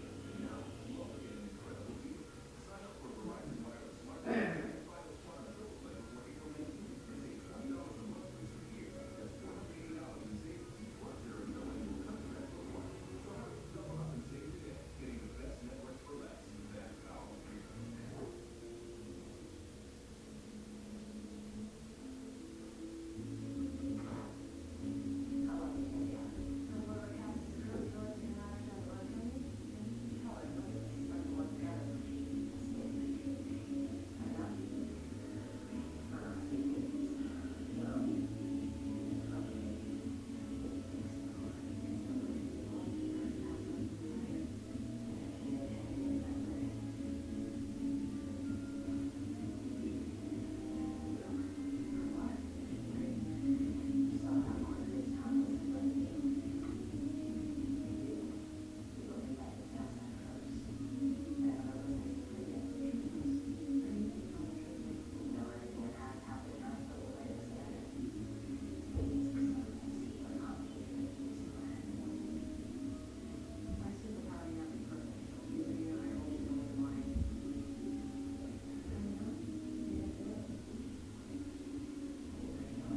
Washington Township, NJ, USA - 2 Jamie Drive
This is a recording of a busy night at a regular family household.
Sewell, NJ, USA